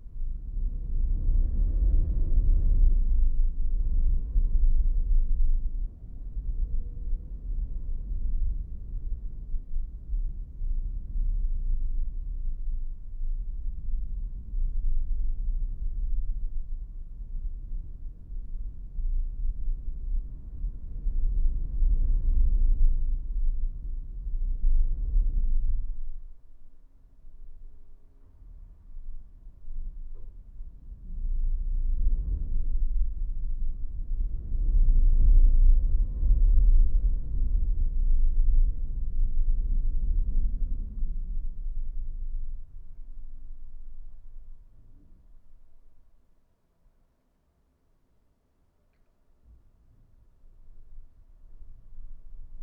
Poznan, Mateckiego street, kitchen - vent shaft
whispering wind recorded in the vent shaft in the kitchen